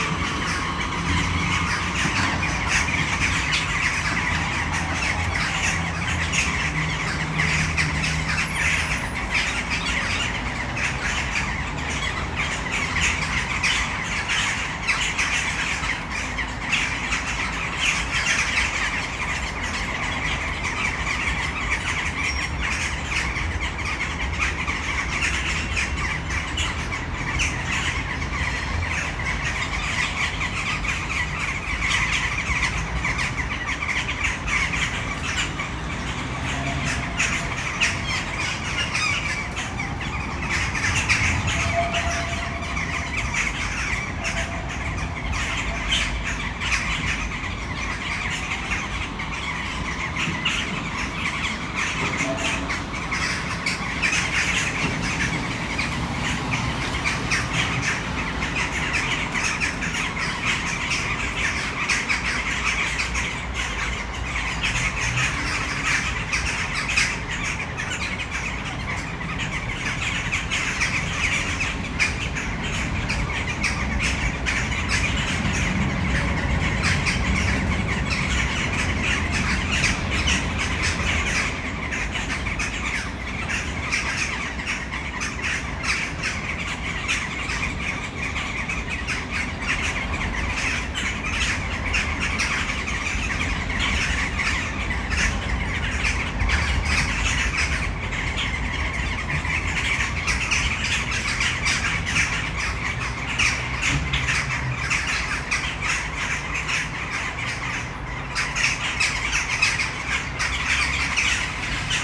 Malinovského nám., Brno-střed-Brno-město, Česko - Western jackdaws at sunset
A tree full of jackdaws in the city center of Brno.